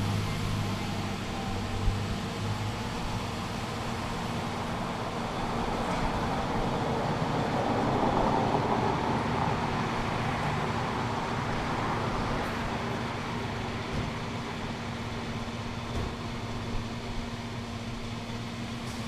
Stafford, Brisbane, Filling up with Petrol.
filling car from petrol pump, other cars coming and going, traffic driving past.
Kedron QLD, Australia, 9 July